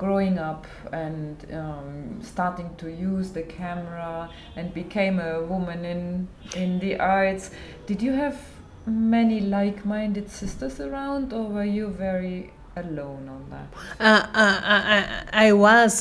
Priscilla Sithole, pioneering women filmmaker in Bulawayo, here tells her story how she first encountered a movie-camera on one of the tours with Amakhosi Productions to Switzerland, and how life took off from there… today, Priscilla is most dedicated to the task of passing on her skills to young women through her Ibhayisikopo Film Project:
We are in the Studio of the painter Nonhlanhla Mathe, and you can here much of the activities in the other studios and the courtyard of the National Gallery… a conversation with our host, Nonhlanhla will follow…
Bulawayo, Zimbabwe, 25 October